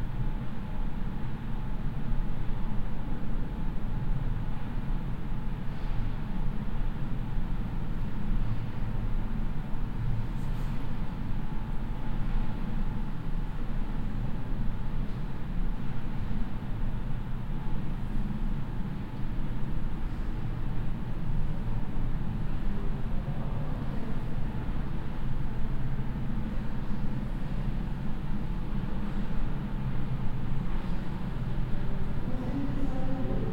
essen, forum for art and architecture, ventilation - essen, forum for art and architecture, ventilation
Walking down the stairway into the basement of the exhibition place - the humming of the ventilation.
Projekt - Klangpromenade Essen - topographic field recordings and social ambiences
Essen, Germany, 9 June 2011, 23:11